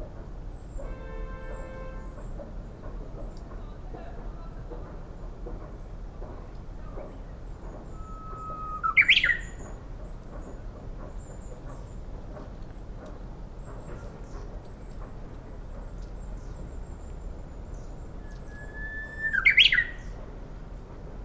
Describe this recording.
Saemangeum Area was formally a large wetland that supported many types of migratory and other birds. Roading has reached out over the sea and connected these small islands to the Korean mainland. The area is being heavily industrialized, and much construction can be heard in the distance as this Houhokekyo makes communication calls.